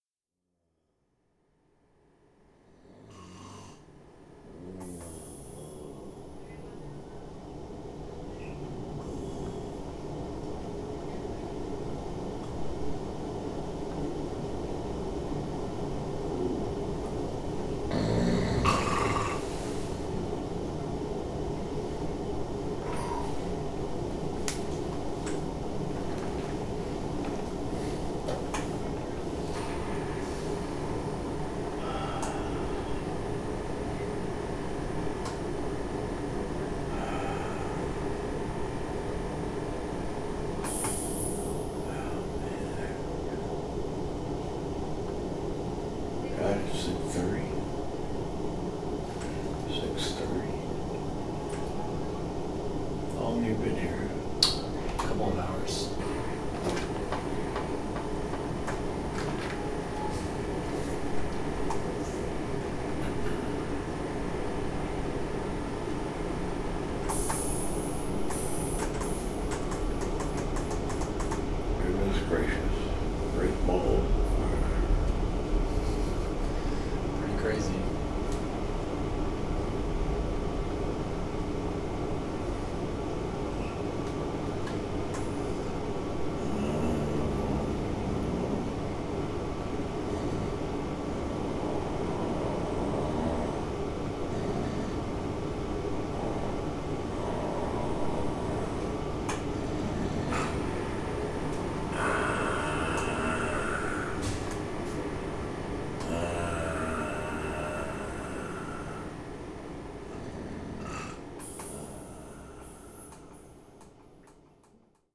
Man briefly emerges from anesthesia, Memorial Hermann Hospital, Houston, Texas
My father sleeps after an operation; snores, wakes up, says some stuff, falls asleep and snores some more. Whirring machines, people talking...
Tascam DR100 MK-2 internal cardioids
TX, USA, July 2012